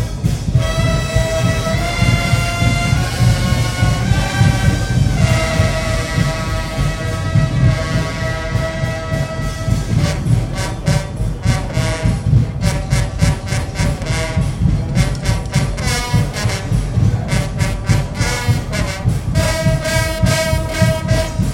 Celetna, Guggen street music festival
Guggen brass music european festival in Prague